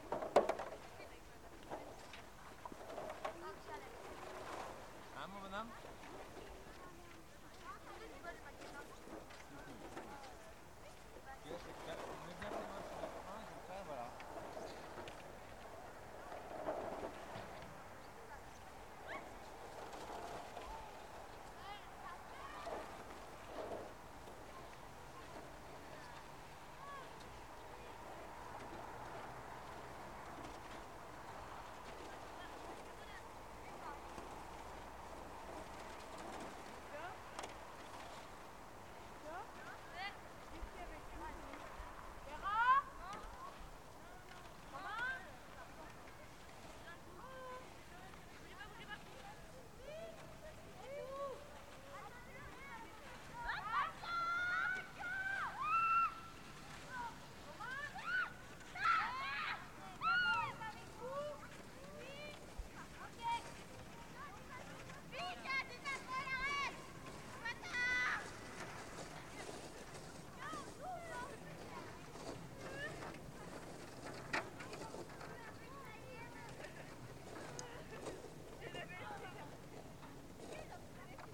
Bonneval-sur-Arc, France - Bonneval in the winter
Bonneval sur Arc in the Winter, snow field at 6pm, children playing and sledging
by F Fayard - PostProdChahut
Sound Device 633, MS Neuman KM 140-KM120